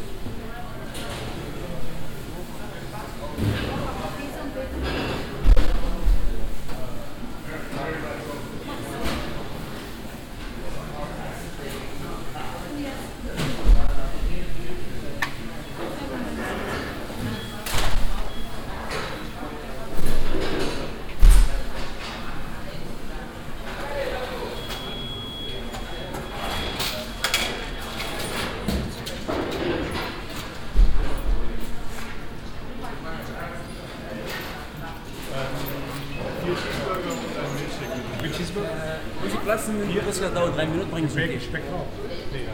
Düsseldorf, Konrad-Adenauer Platz, amerikanischer Schnellimbiss - düsseldorf, konrad-adenauer platz, amerikanischer schnellimbiss
Schnellimbiss Atmo am frühen Abend, mtv bBeschallung, Bestellungen, Tablett sortieren, Türen schlagen
soundmap nrw: social ambiences/ listen to the people - in & outdoor nearfield recordings